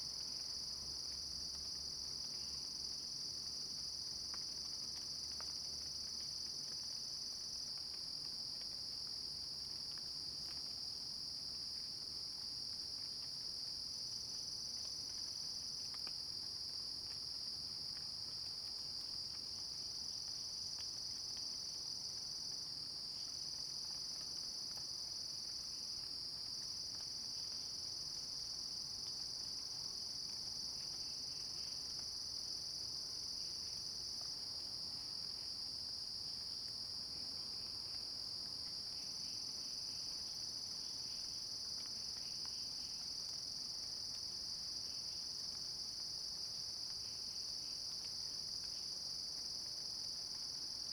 水上巷, 桃米里, Puli Township - Cicadas cries
Facing the woods, Raindrop sound, Cicadas cries
Zoom H2n MS+XY